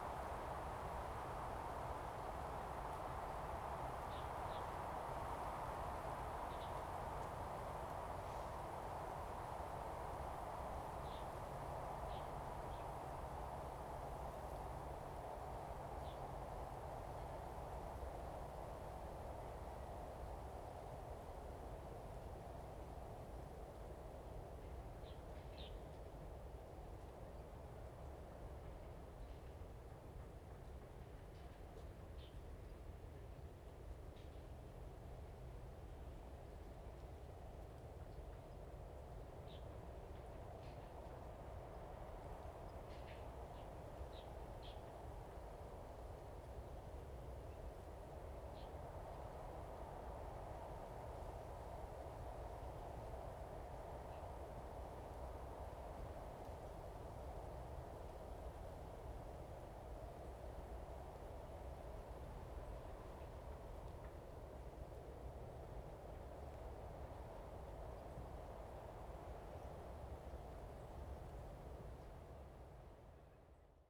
西湖, Lieyu Township - In the woods
Iron-wood, Birds singing, next to the lake's, Wind
Zoom H2n MS +XY
福建省, Mainland - Taiwan Border, 4 November, ~11:00